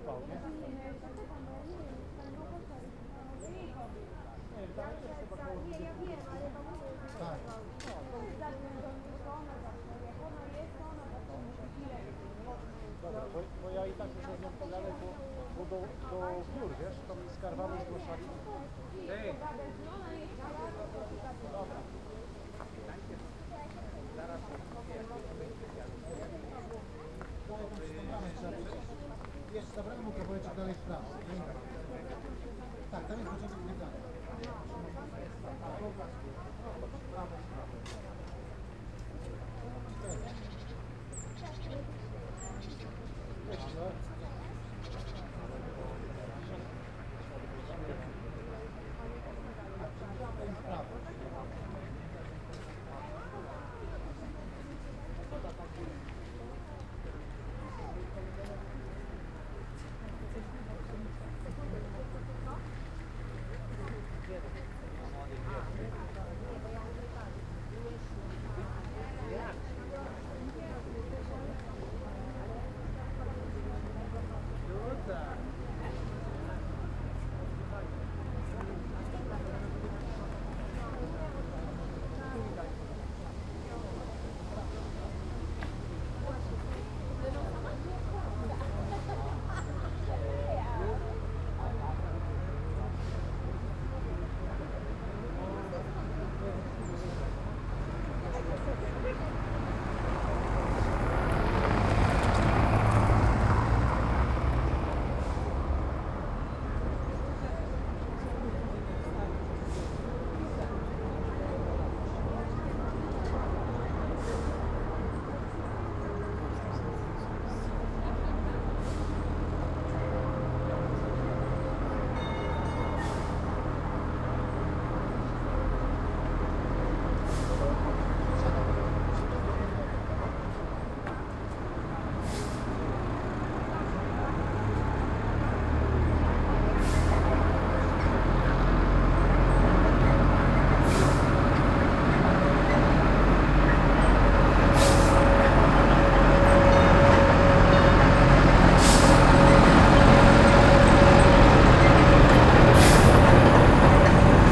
siting not do far from the entrance to inner castle yard
Malbork, Poland, 13 August 2014